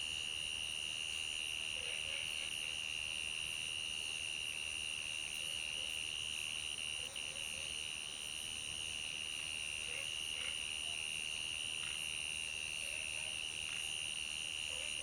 Zhonggua Rd., 桃米里, Taiwan - In the grass
In the grass, Frog Sound, Sound of insects, late at night
Zoom H2n MS+XY